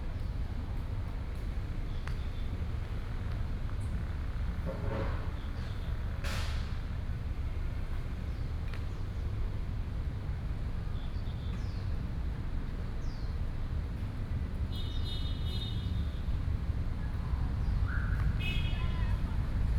Ren'ai Park, Taipei City - in the Park
Hot weather, in the Park, Traffic noise, Bird calls